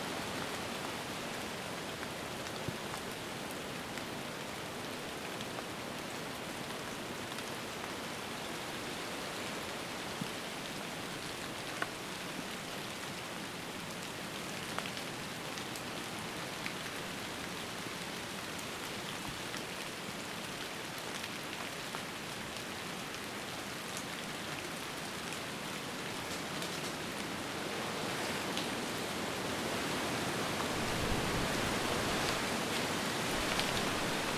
Aywaille, Belgium - Ruins of the castle of Emblève
Gusts of wind in the ruins of the castle of Emblève in Aywaille, Belgium. It's winter, it's raining and some dead trees are creaking in the background.